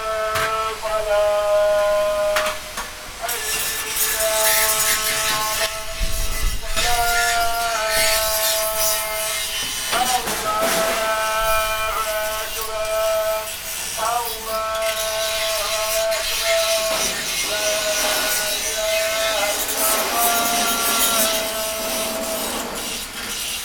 Rue LIB, Dakar, Senegal - metal workshop 2